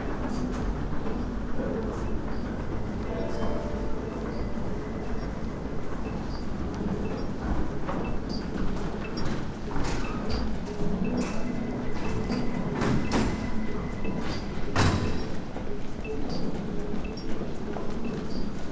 airport, narita, tokyo - silent corridors
Chiba Prefecture, Japan